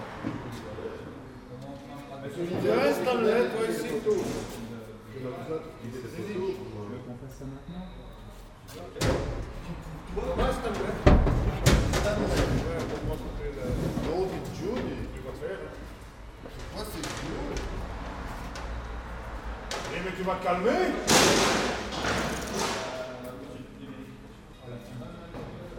Court-St.-Étienne, Belgique - The warehouse
People working, prepairing future roadworks, they store traffic signs.